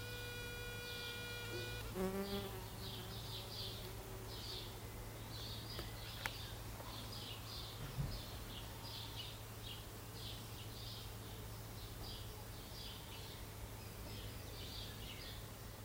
propach, bee in a tree
recorded june 13th, 2008.
project: "hasenbrot - a private sound diary"